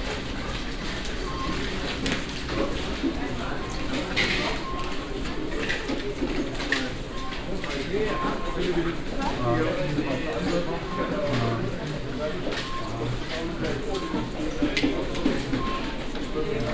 lech, arlberg, rütikopfbahn
At the entrance of the mountain gongola entitled Rütikopfbahn (leading up the mountain Rütikopf). The sound of heavy snow shoes walking inside weared by visitors who also carry their ski sticks, helmets, snowboards and other ski equipment. Permanently the sound of the engine that moves the steel rope.
international sound scapes - topographic field recordings and social ambiences